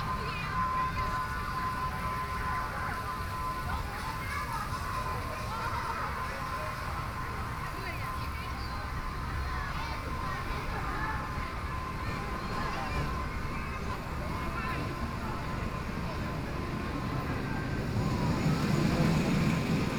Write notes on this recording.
From the sound of the various play areas, Binaural recording, Zoom H6+ Soundman OKM II